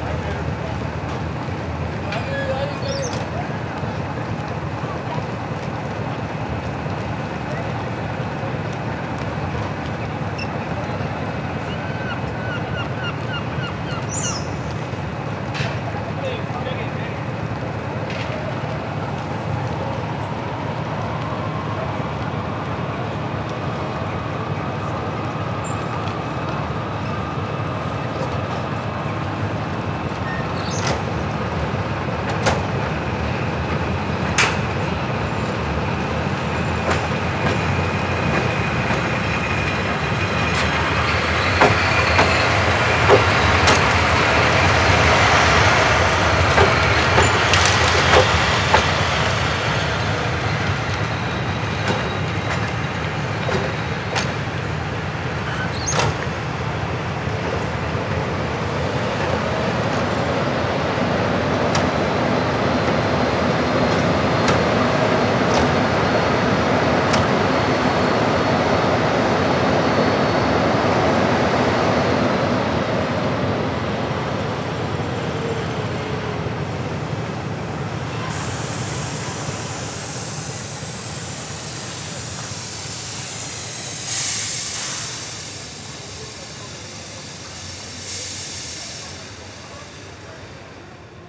Recorded with a Zoom H6 in MS recording mode.